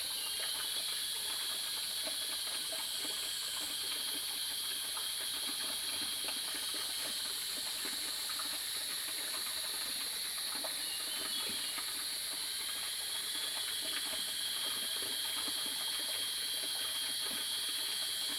華龍巷, 魚池鄉, Nantou County - Upstream streams
Cicadas cry, Bird sounds, Small streams
Zoom H2n MS+XY